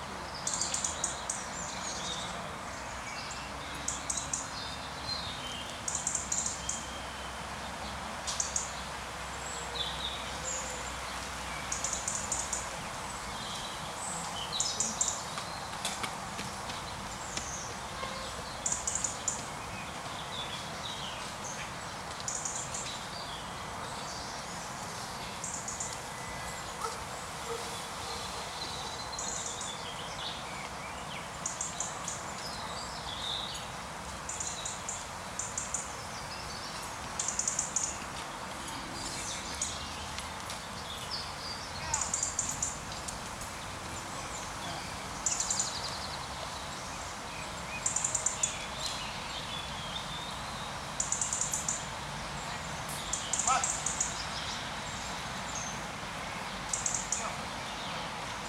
Silence Valley, Olivais Sul 1800 Lisboa, Portugal - Quarantine Park
"Sillence Valley" a park that retains its name againg because of the quarantine period, much less cars, much more birds. Recorded with a SD mixpre6 and a pair of clippy primo 172 in AB stereo configuration.
Área Metropolitana de Lisboa, Portugal, March 2020